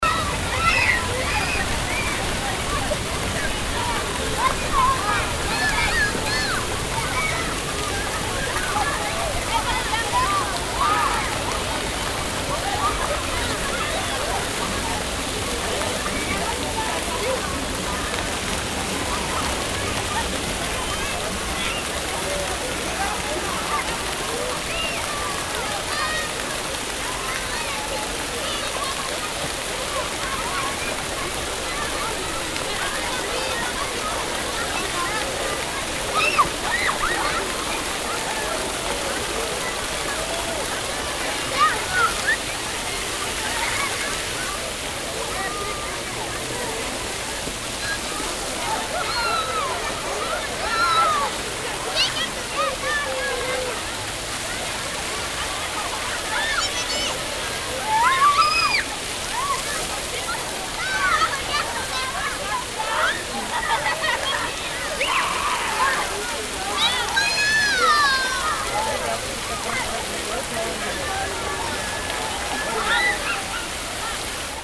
La Villette, Paris, France - Kids playing in the Fountain
Fontaine place de la Rotonde de la Villette, Paris, (Jaurès)
Kids playing in the fountain on a hot summer day.